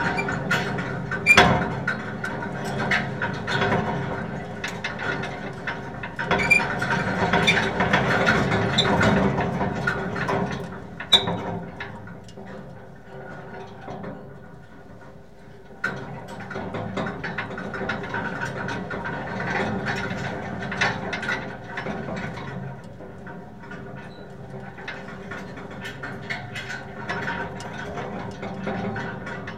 Rue de Mirepoix, Toulouse, France - metalic vibration 02
wind, scaffolding, metal moving structure
Captation : ZOOMh4n + AKG C411PP